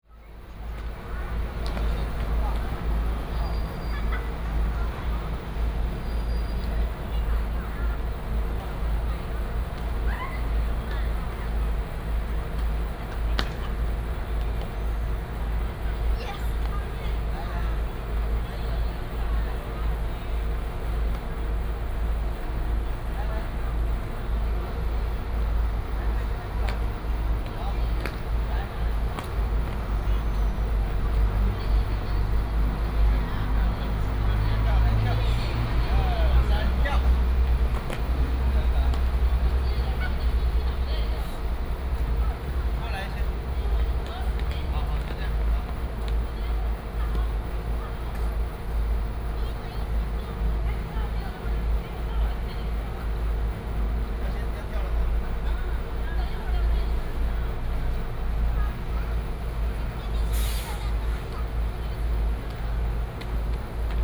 {
  "title": "瀨南街16巷, Yancheng Dist., Kaohsiung City - In the square",
  "date": "2018-03-30 17:13:00",
  "description": "In the square, Air conditioning and air conditioning noise, Child on the square\nBinaural recordings, Sony PCM D100+ Soundman OKM II",
  "latitude": "22.62",
  "longitude": "120.28",
  "altitude": "3",
  "timezone": "Asia/Taipei"
}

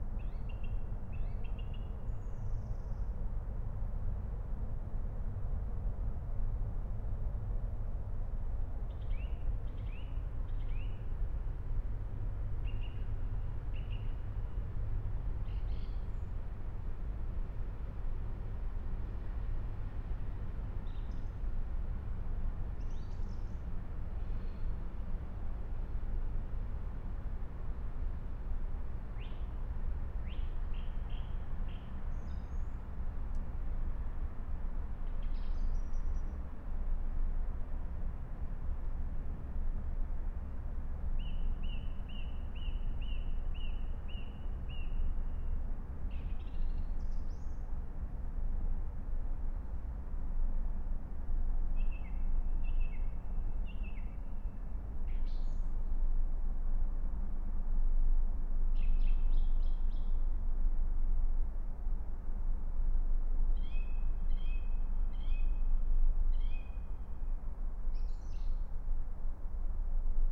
{
  "title": "Berlin, Alt-Friedrichsfelde, Dreiecksee - train junction, early morning pond ambience, Song thrush",
  "date": "2022-03-22 05:00:00",
  "description": "05:00 Berlin, Alt-Friedrichsfelde, Dreiecksee - train junction, pond ambience",
  "latitude": "52.51",
  "longitude": "13.54",
  "altitude": "45",
  "timezone": "Europe/Berlin"
}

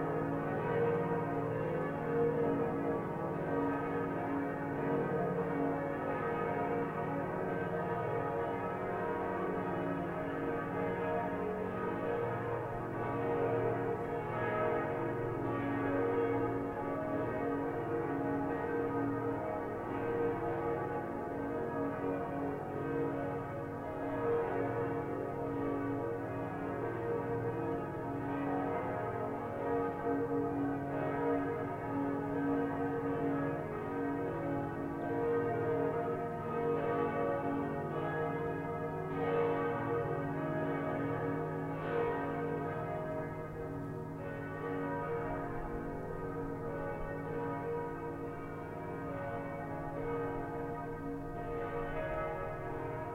{
  "title": "Close to place Gutenberg, Strasbourg, France - Cathedral and churches bells",
  "date": "2020-04-09 18:00:00",
  "description": "Strasbourg cathedral's bell and several other churches ringing together everyday at 6pm during the lockdown caused by the coronavirus crisis.\nRecorded from the window.\nWe can also hear some pigeons flying or walking on the roof.\nGear : Zoom H5.",
  "latitude": "48.58",
  "longitude": "7.75",
  "altitude": "149",
  "timezone": "Europe/Paris"
}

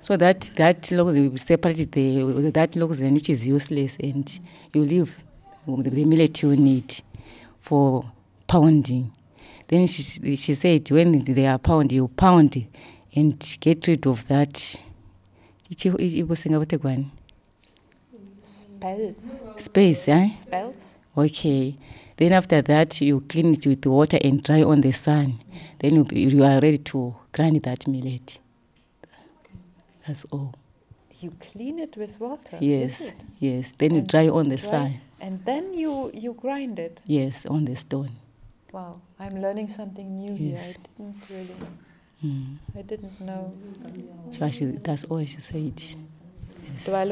{
  "title": "Tusimpe Pastoral Centre, Binga, Zimbabwe - Lucia translates for Julia...",
  "date": "2016-07-05 12:00:00",
  "description": "Lucia Munenge translates what Julia said...",
  "latitude": "-17.63",
  "longitude": "27.33",
  "altitude": "605",
  "timezone": "GMT+1"
}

